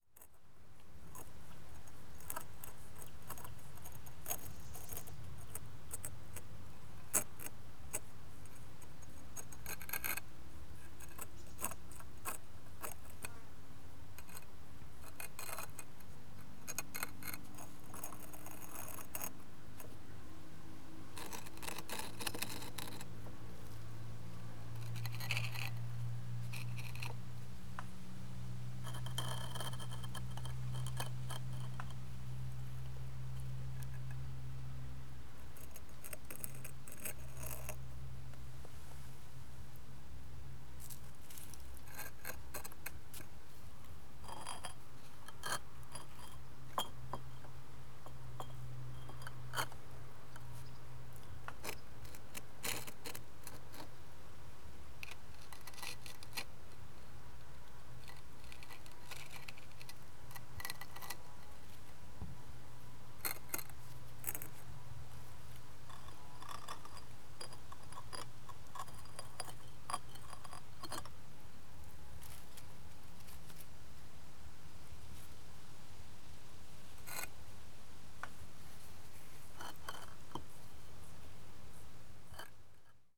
Poznan, Andrew's house, driveway - bricks
a pile of brick parts, listening what the individual bricks have to say in their peculiar language
12 August 2012, 10:39